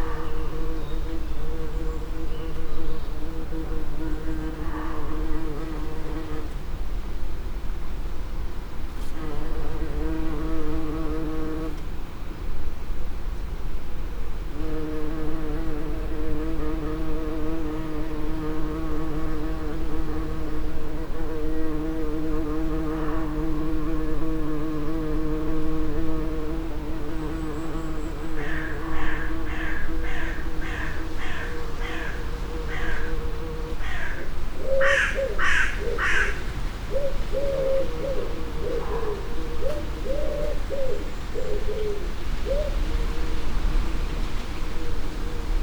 Recordings in the Garage, Malvern, Worcestershire, UK - Bees Muntjac Crows Pigeons
It's 5am. Bees are in the nasturtiums just to the left of the open door, a muntjac calls on the hillside: he is a third of a mile away and 500ft higher, crows and pigeons make up the dawn chorus for this time of the year.
MixPre 6 II with 2 x Sennheiser MKH 8020s.